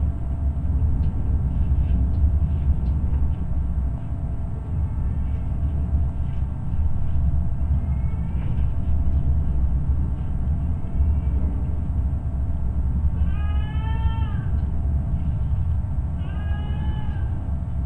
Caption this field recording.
Open air sculpture park in Antalge village. There is a large exposition of large metal sculptures and instaliations. Now you can visit and listen art. Multichanel recording using geophone, contact microphones and electromagnetic antenna Ether.